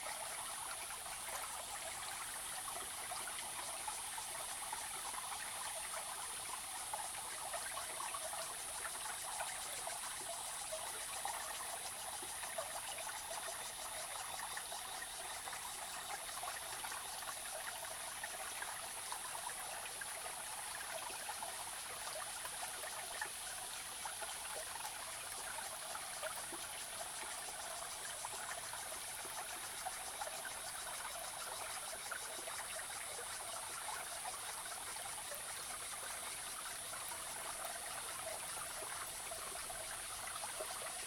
Zhonggua River, 成功里 Puli Township - Sound of water
Brook, small stream, Sound of water
Zoom H2n MS+XY+Spatial audio
27 July 2016, 13:00